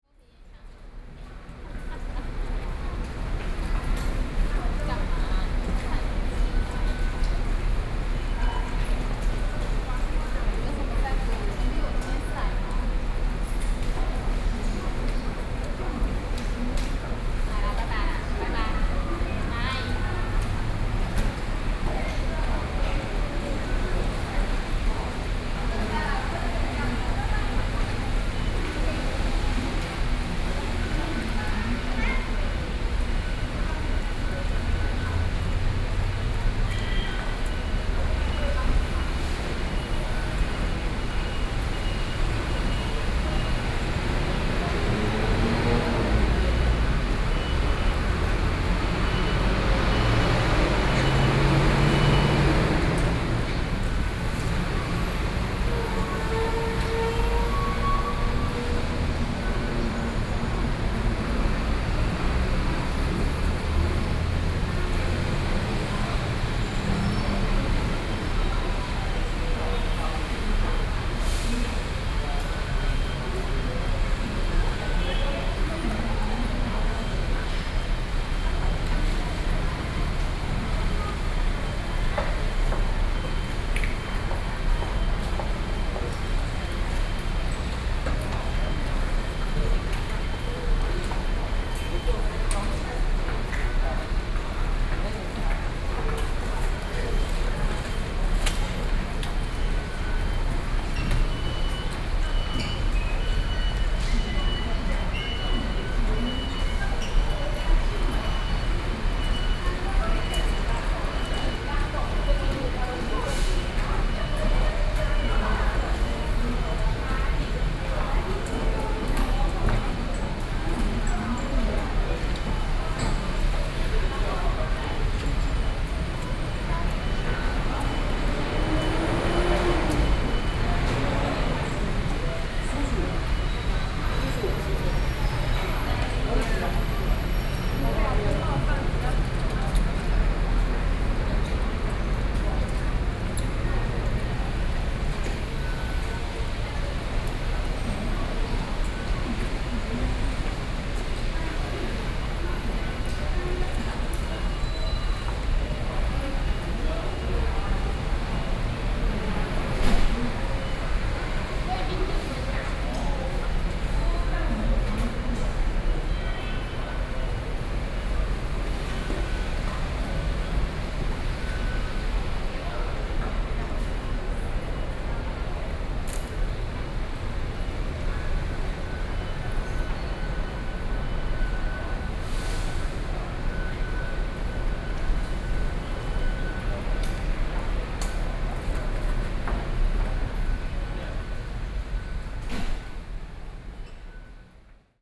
in the MRT entrance, Rainy streets, Sony PCM D50 + Soundman OKM II
Taipei City, Taiwan, 30 September 2013, ~18:00